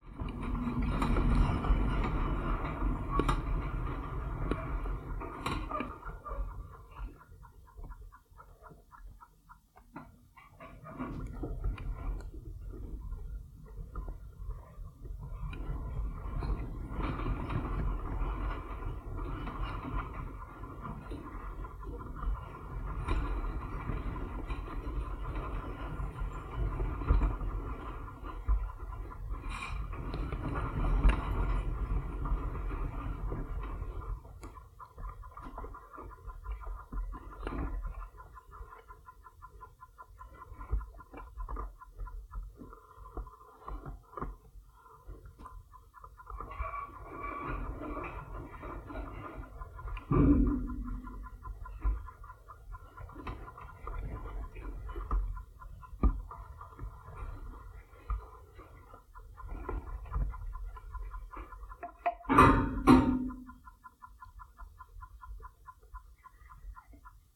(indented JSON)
{
  "title": "Van Buren Trail, South Haven, Michigan, USA - Van Buren Trail",
  "date": "2021-07-23 15:32:00",
  "description": "Contact mic recording of welded wire fence.",
  "latitude": "42.39",
  "longitude": "-86.28",
  "altitude": "192",
  "timezone": "America/Detroit"
}